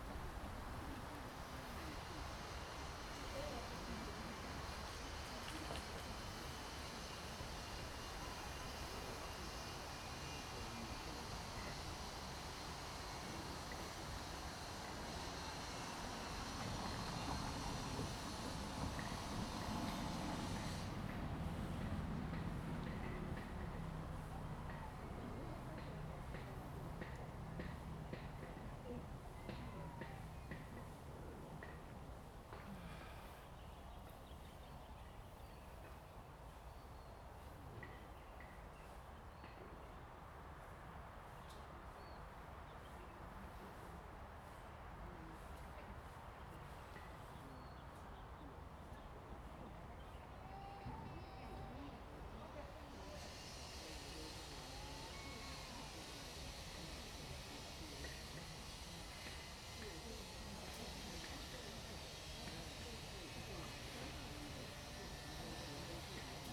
the wind, Traffic Sound, Tourists
Zoom H2n MS +XY